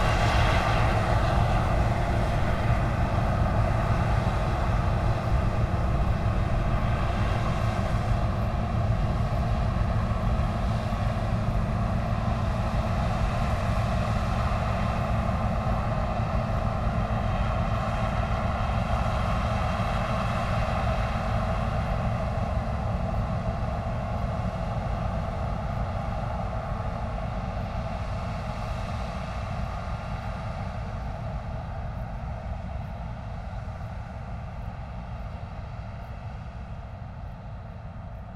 A combine harvester in the fields, harvesting the wheat.